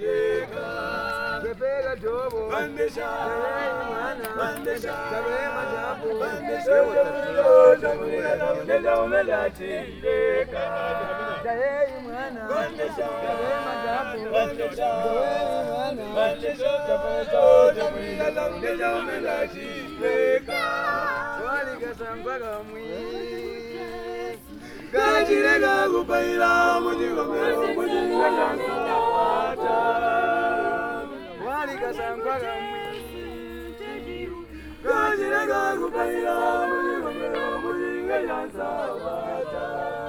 Sinazongwe, Zambia - Voices singing in the dark...
walking home in the evening from Zongwe FM studio at Sinazongwe Primary, i hear singing by many voices in the dim light... i come closer and linger for a moment among the groups of young people... there had been a choir rehearsal at the Adventist church... now choir folk is still lingering in small groups continuing with their singing...
Southern Province, Zambia, 28 July 2018